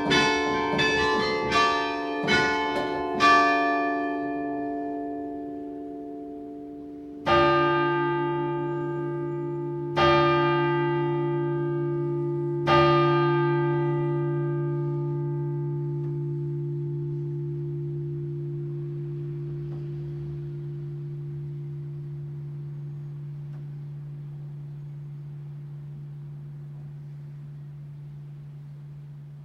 Carillon, Bergues, France - Carillon, Bergues, 3 p.m.
The carillon of the Beffroi in Bergues, scene of the popular movie "Bienvenue chez les Ch'tis", recorded up in the chamber of the carillonneur. Zoom H2.